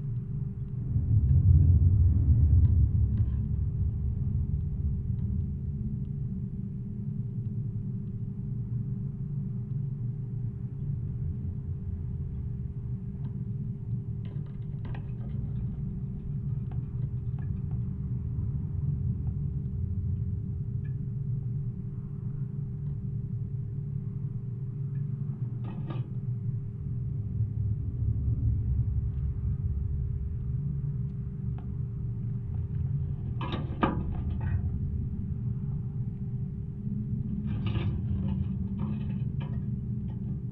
Support Cable for Pylon
Recording of support cable for an electricity pylon during high winds with foliage rubbing against the cable